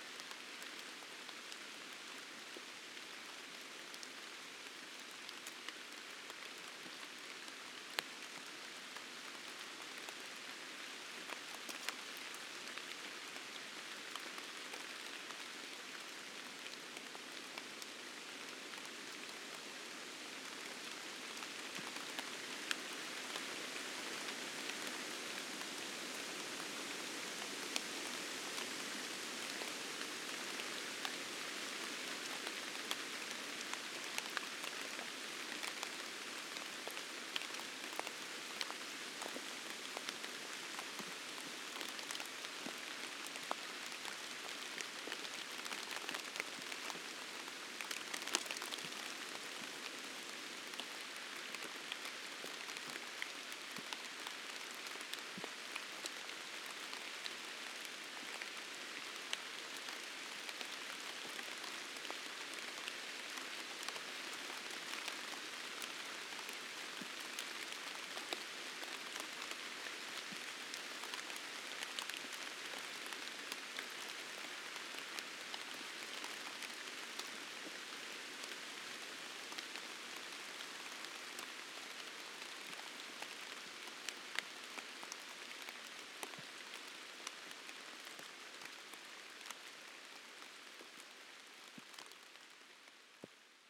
Inkartai, Lithuania, rain in cemetery
Small Inkartai willage cemetery. Rain comes
Utenos apskritis, Lietuva, 19 September